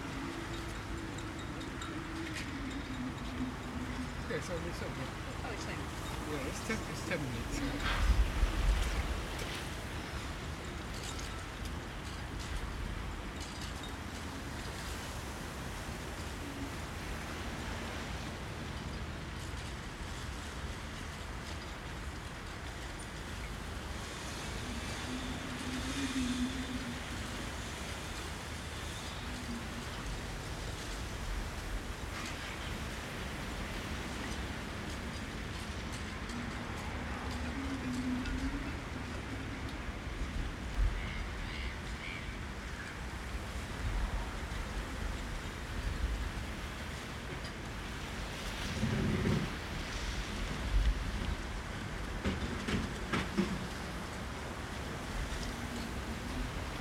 Brighton Marina, East Sussex, UK - Brighton Marina
light wind, fisherman working nearby. recorded on olympus ls11 with em272 clippy external mics.